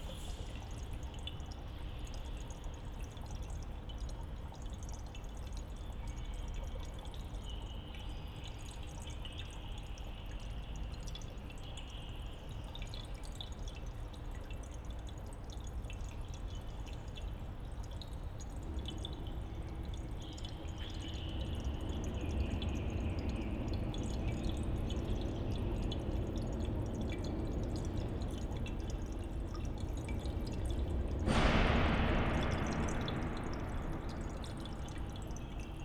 impressive architecture by architects Schultes & Frank, great acoustic inside. in the middle o this space, there's a little fountain with a white egg floating over the water. the deep rumble comes from the heavy iron gates at the entrance.